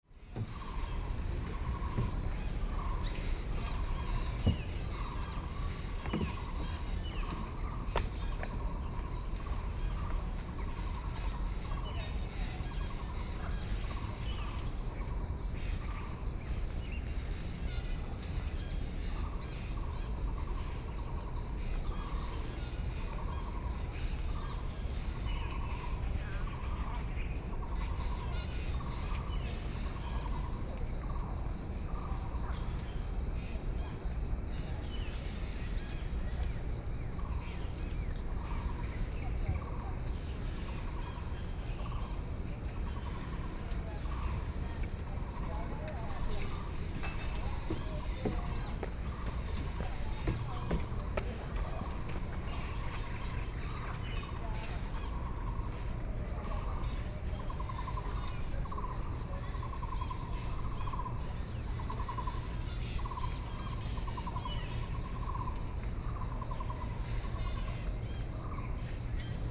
金龍湖, Xizhi Dist., 新北市 - Bird calls
Bird calls, Morning at the lakes
Binaural recordings, Sony PCM D50
New Taipei City, Xizhi District, 金龍湖環湖步道, 16 July 2011, ~06:00